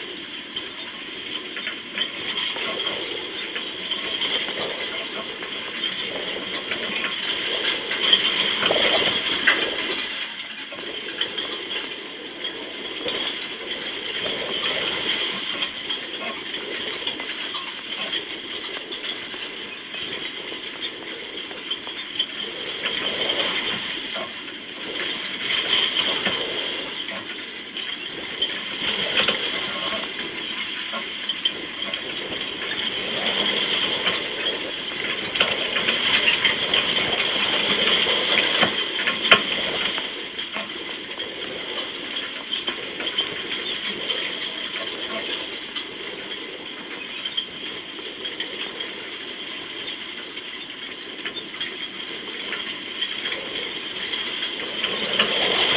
Luttons, UK - Fencing with contact mics ...
Galvanised wire stock fencing in a gale ... two contact mics pushed into the wire elements ... the resulting rattling was wonderful when listening with headphones ...